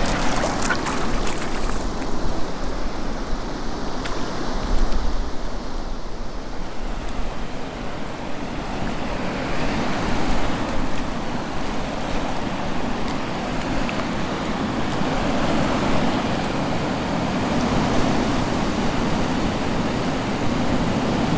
Garryvoe Beach, East Cork, Ireland - Tide Coming In, Slowly.
Recorded using Tascam DR-05 inbuilt microphone. Standing in shallow water while the tide decides whether to go in or out.